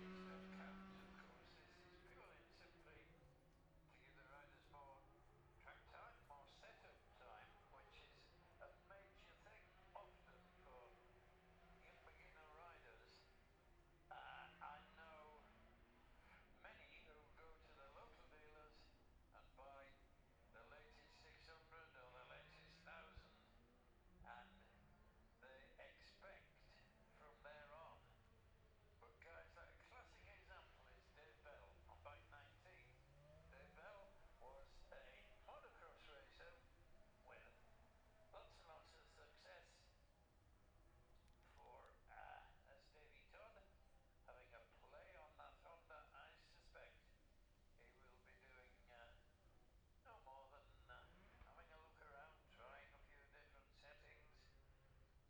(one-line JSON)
{"title": "Jacksons Ln, Scarborough, UK - gold cup 2022 ... lightweight practice ...", "date": "2022-09-16 10:44:00", "description": "the steve henshaw gold cup 2022 ... lightweight practice ... dpa 4060s on t-bar on tripod to zoom f6", "latitude": "54.27", "longitude": "-0.41", "altitude": "144", "timezone": "Europe/London"}